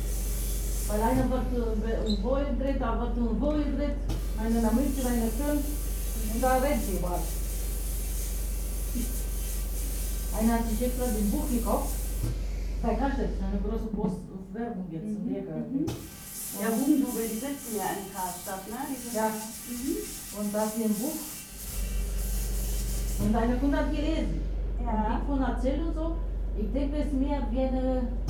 berlin, jahnstraße: fusspflegepraxis - the city, the country & me: pedicure salon
pedicurist talking with her client
the city, the country & me: march 31, 2011